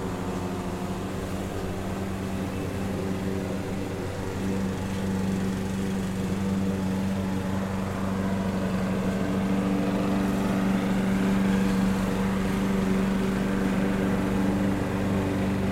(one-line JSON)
{"title": "Eckernförder Str., Kronshagen, Deutschland - Power mower noise", "date": "2017-09-04 09:15:00", "description": "Infernal noise of two power mower. Zoom H6 recorder, xy capsule", "latitude": "54.35", "longitude": "10.10", "altitude": "16", "timezone": "Europe/Berlin"}